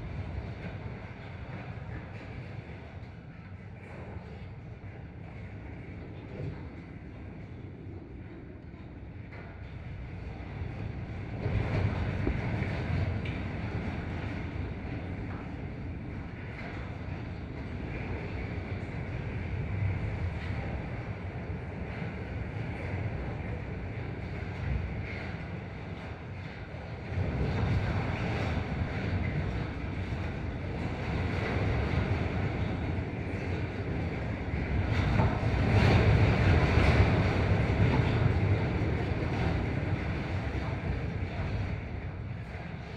Nolenai, Lithuania, fence in the wind
half abandoned warehouse. metallic fence around it. windy day and contact microphones
Utenos apskritis, Lietuva